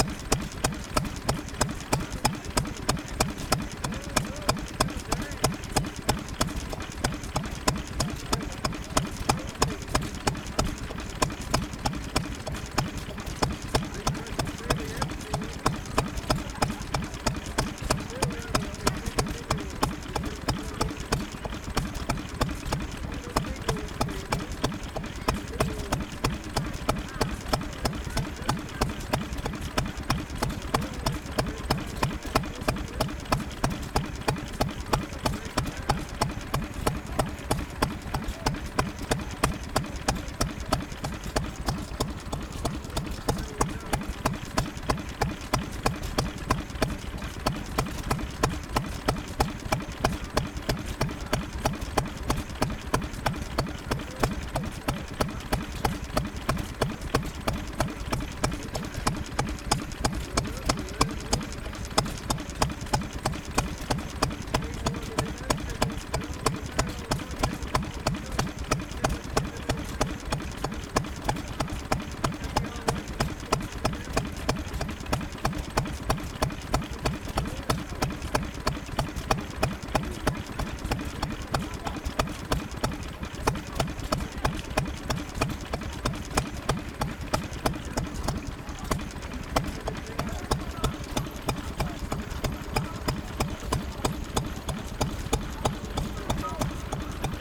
Burniston, UK - Stationary Engine ... Burniston and District Fair ...
Bamford Stationary Engine ... built in the 1940s ..? used to power pumps ... generators ... farm machinery ... open lavalier mics clipped to baseball cap ... warm sunny afternoon ...
29 August 2016